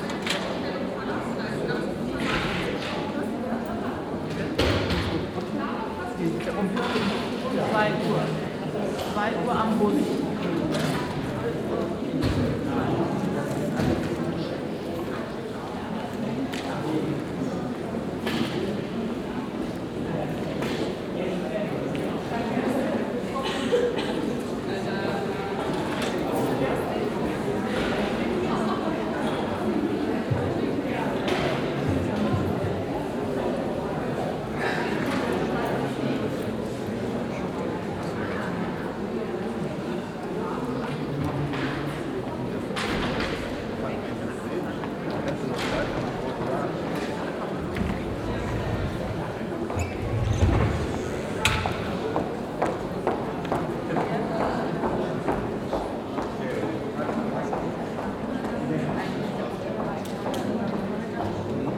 Südviertel, Essen, Deutschland - essen, museum folkwang, foyer
Im Foyer des Museum Folkwang. Der Klang der Stimmen von Museumsbesuchern an der Informationstheke, Schritte, das Verschliessen von Schliesfächern, das Öffnen und Schliessen der Infothekentür, der Hall in der hohen reflektiven Architektur.
Inside the foyer of the museum folkwang.
Projekt - Stadtklang//: Hörorte - topographic field recordings and social ambiences